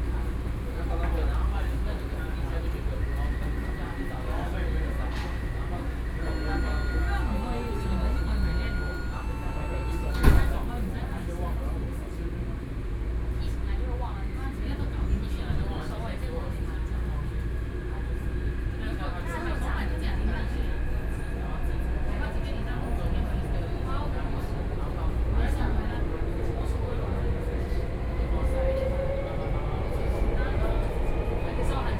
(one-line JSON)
{"title": "Shilin District, Taipei - Inside the MRT", "date": "2013-07-01 21:21:00", "description": "Inside the MRT, from Shilin to Downtown, Sony PCM D50 + Soundman OKM II", "latitude": "25.09", "longitude": "121.53", "altitude": "12", "timezone": "Asia/Taipei"}